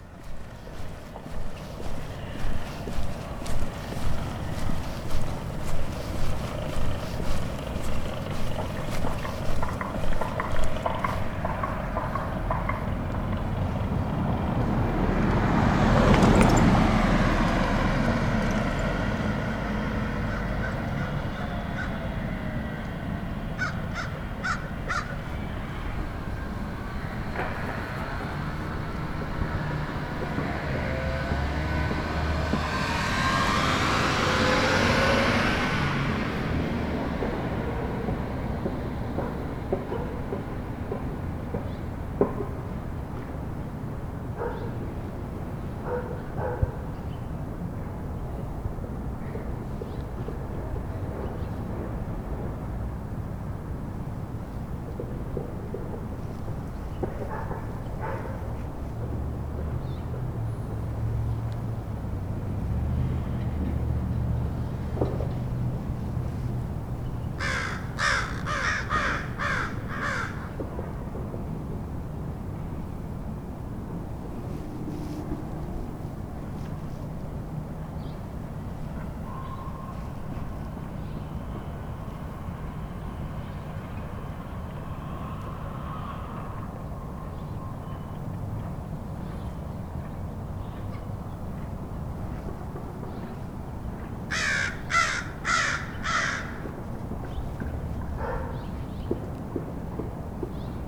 University Hill, Boulder, CO, USA - Wednesday Afternoon
Walking home from the hill I hit a hot spot where I started hearing a variety of various noises.